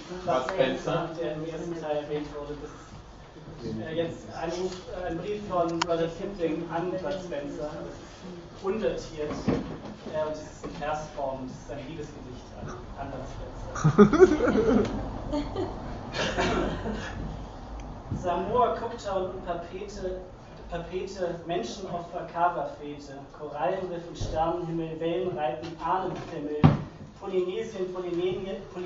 Der Kanal, Weisestr. 59. Auschschnitt aus dem 4. Synergeitischen Symposium
The fourth synergeitic symposium this year held at DER KANAL. Here we can present, alas, but one of the plentiful literary fruit: Niklas is reading out a letter from Rudyard Kipling to Bud Spencer, taken from the abundant correspondence of these two modern heros.
Berlin, Germany, 22 August 2010